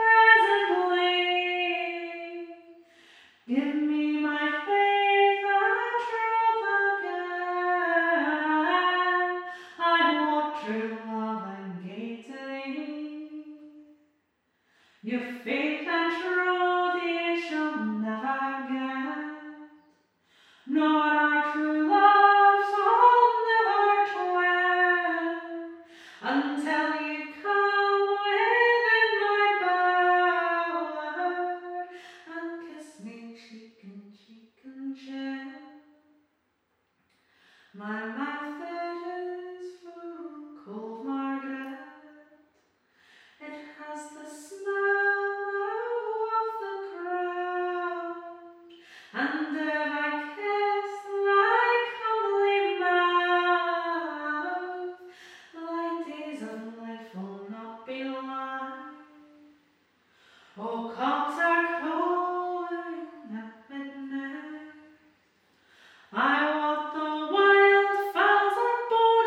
Melrose, Scottish Borders, UK - River Song - Kirsty Law, Clerk Saunders
Scots singer Kirsty Law singing the Border Ballad 'Clerk Saunders' inside the Summerhouse, Old Melrose, in the Scottish Borders. Recorded in September 2013, this well known Border Ballad is taken back into the heart of the environment from which it was produced, sung and eventually written. The Summerhouse at Old Melrose lies directly opposite Scott's View. The piece explores the song in the context of the resonance of the architecture of the historic building.
5 September 2013, 16:47